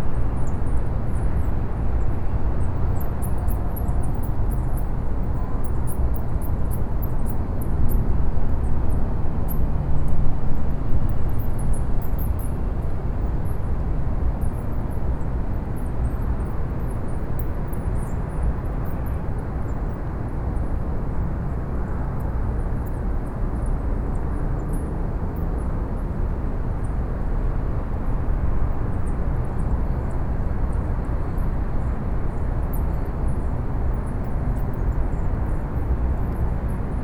Austin, Lady Bird Lake Trail, Bats
USA, Austin, Texas, Bats, Binaural
TX, USA